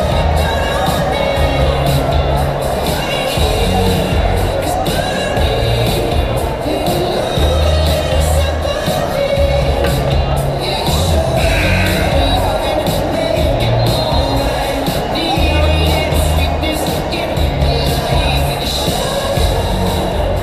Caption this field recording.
Windsor Lancers Women's basketball home opener at the St. Denis center. I put my camera down and walked away. I think the sound really brings in the atmosphere of the game